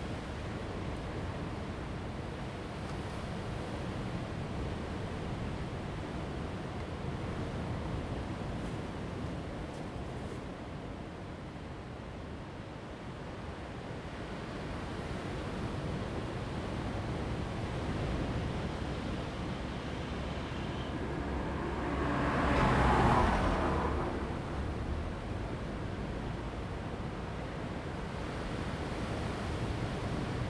Lüdao Township, Taitung County - sound of the waves
On the coast next to the announcement, Tide and Wave, Traffic Sound
Zoom H2n MS+XY
30 October 2014, ~16:00